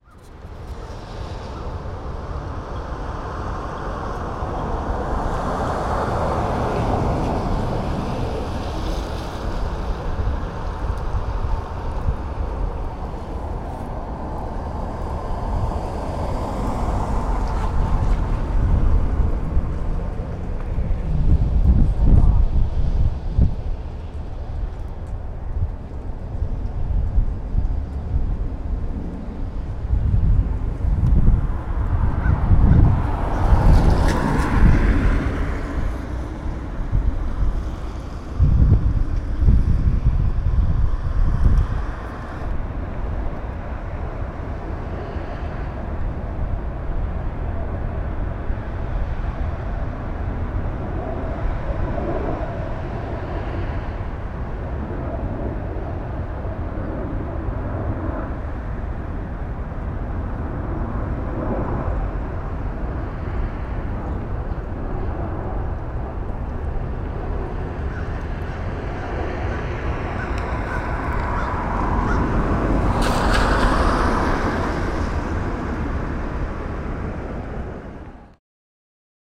Muhlenberg College Hillel, West Chew Street, Allentown, PA, USA - Albright Graveyard Interruptions
This is a recording of N Albright Street, directly in front of a graveyard. The street is typically completely silent aside from wind, passing cars and occasional crows who make sounds in trees bordering the graveyard. This particular morning there were many passing cars, crowns and wind motions interrupting the silence.